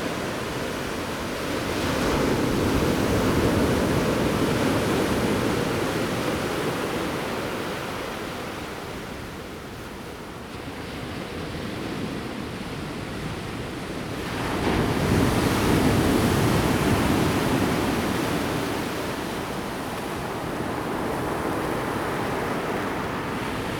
Waves, at the beach
Zoom H2n MS+ XY